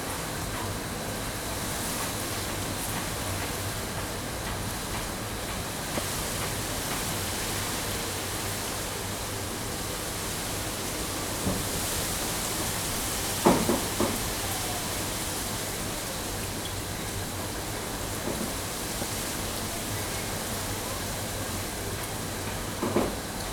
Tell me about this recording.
soundscapes of the rainy season...